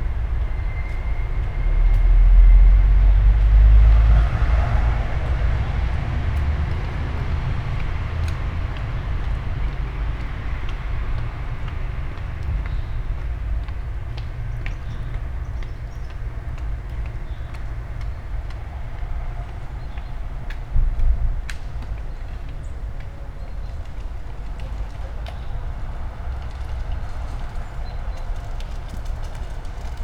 all the mornings of the ... - jul 30 2013 tuesday 07:32